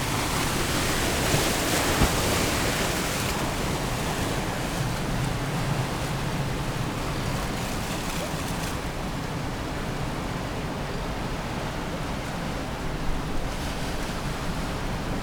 East Lighthouse, Battery Parade, Whitby, UK - east pier ... outgoing tide ...
east pier ... outgoing tide ... lavalier mics clipped to T bar on fishing landing net pole ...